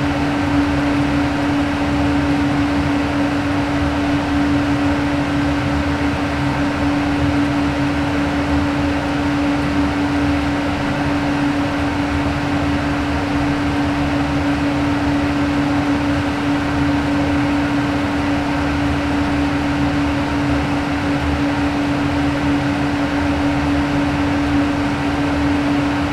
Colombarium ventilation 1b
Fête des Morts
Cimetière du Père Lachaise - Paris
Ventilation sous terre